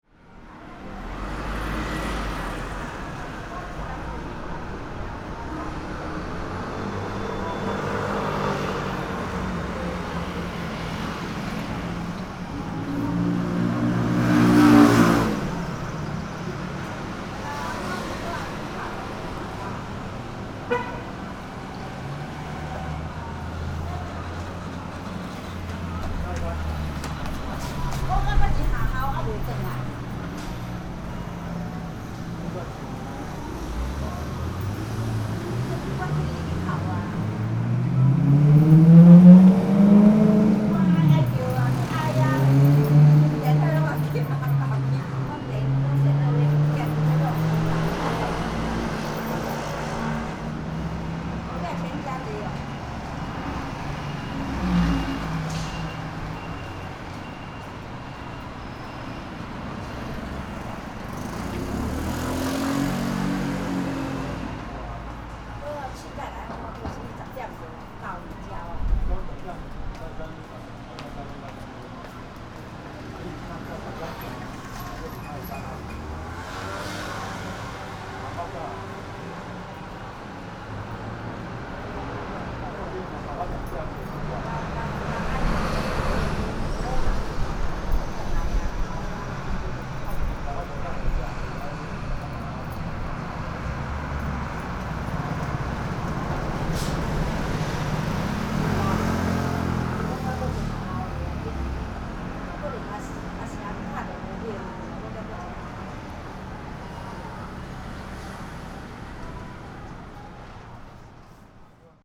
Outside the convenience store, Traffic Sound
Sony PCM D50+ Soundman OKM II